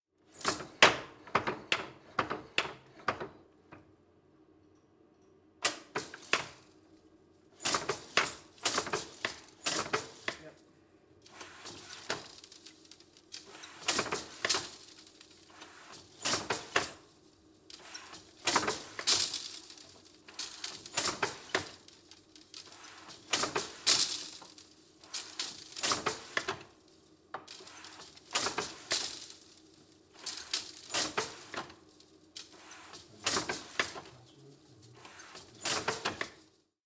Global Yell/ASF Weaving, Yell, Shetland Islands, UK - Andy Ross operating one of the looms
This is the sound of one of the looms at Global Yell/ASF Weaving being operated by the director, Andy Ross.
2 August 2013